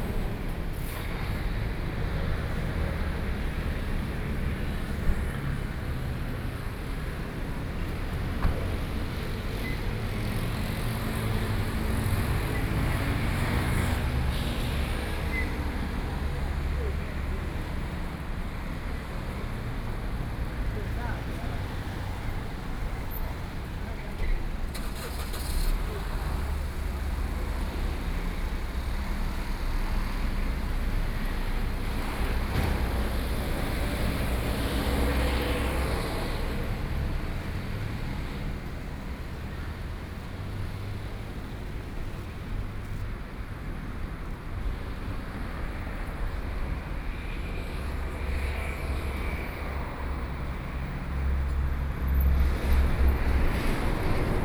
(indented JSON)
{
  "title": "Puxin - traffic noise",
  "date": "2013-08-14 11:44:00",
  "description": "Front of the station's traffic noise, Sony PCM D50+ Soundman OKM II",
  "latitude": "24.92",
  "longitude": "121.18",
  "timezone": "Asia/Taipei"
}